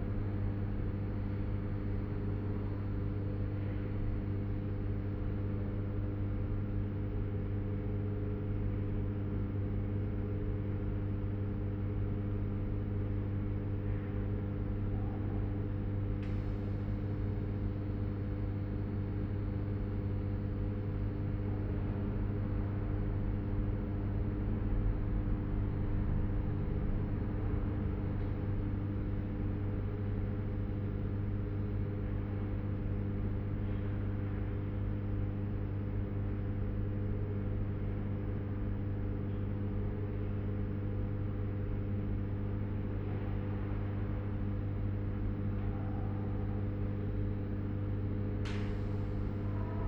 Inside an exhibition hall of the Hejens Ceramic Museum. The humming of the electricity and in the distance some voices out of the close by office in the silence of the hall.
This recording is part of the intermedia sound art exhibition project - sonic states
soundmap nrw - sonic states, social ambiences, art places and topographic field recordings
Düsseldorf, Germany, 19 November 2012, 11:30